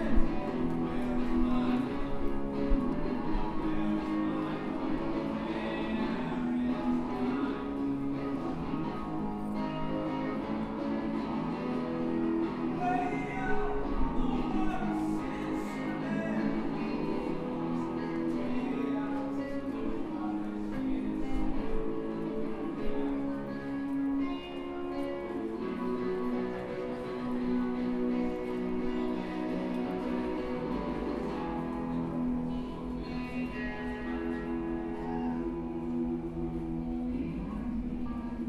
Union Square, New York, Subway Station
Béla NYC Diary, two Afro-American musicians playing in between the stairways.